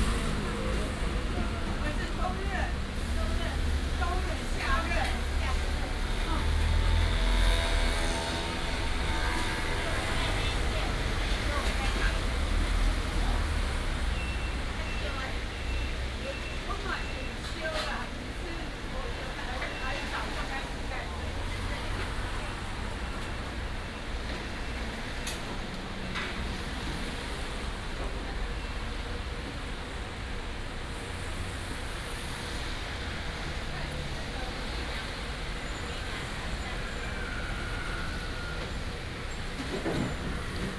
Gongzheng Rd., Luodong Township - Restaurant and traffic sound
Restaurant and traffic sound, Rainy day, Binaural recordings, Sony PCM D100+ Soundman OKM II
Yilan County, Luodong Township, 公正路65號